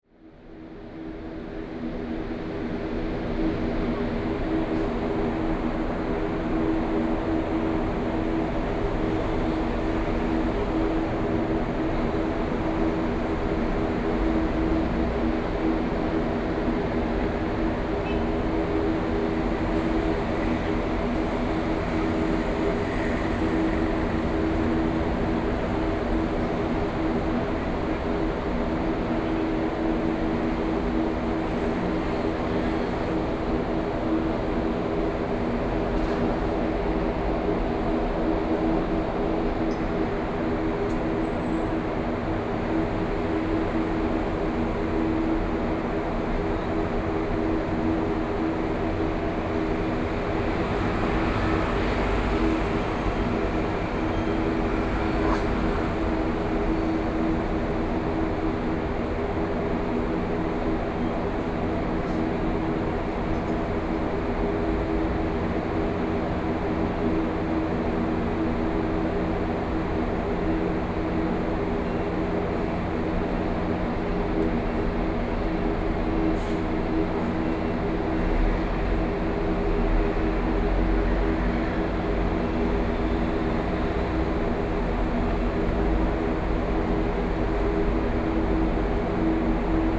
Longshan Temple Station, Taipei - Air-conditioning noise
Air-conditioning noise, Sony PCM D50 + Soundman OKM II